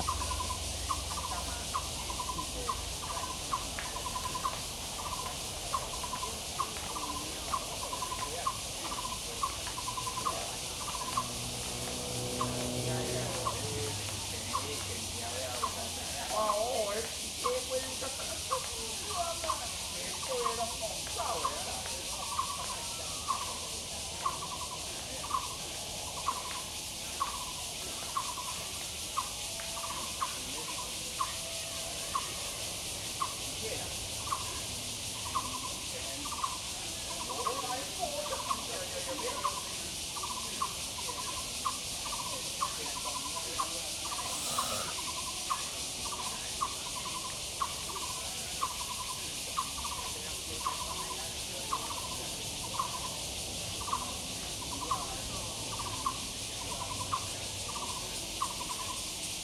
富陽自然生態公園, Taipei City, Taiwan - in the Park
Many elderly people doing exercise in the park, Bird calls, Cicadas cry
Zoom H2n MS+XY